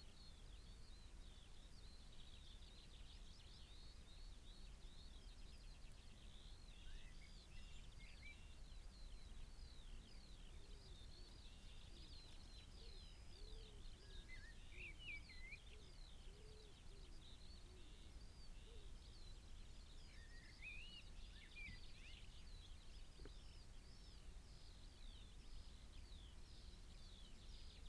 Green Ln, Malton, UK - grubbed out bees nest ...
grubbed out bees nest ... buff tipped bees nest ..? dug up by badger ..? dpa 4060s in parabolic to MixPre3 ... parabolic resting on nest lip ... return visit ... bird calls ... song ... blackbird ... yellowhammer ... skylark ... corn bunting ... blue tit ... chaffinch ... some spaces between the sounds ...
England, United Kingdom, 24 June 2021